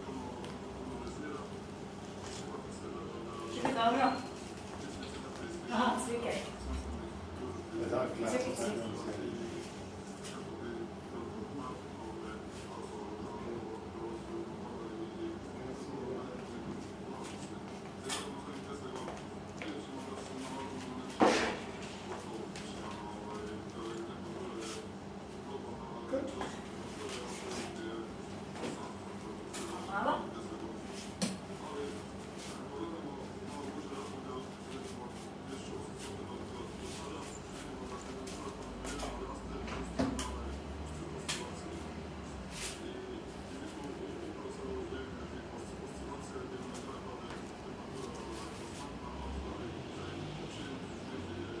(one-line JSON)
{"title": "barber shop, Petra Preradovica", "date": "2010-06-11 15:44:00", "description": "barber shop, recorded during EBU sound workshop", "latitude": "45.81", "longitude": "15.97", "altitude": "130", "timezone": "Europe/Zagreb"}